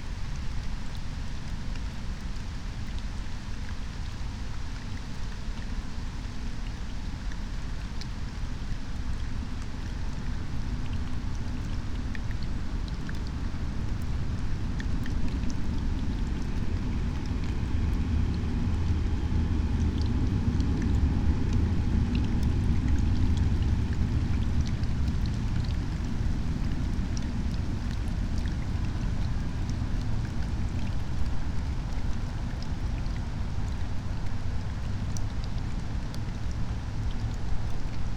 18:14 Berlin, Alt-Friedrichsfelde, Dreiecksee - train junction, pond ambience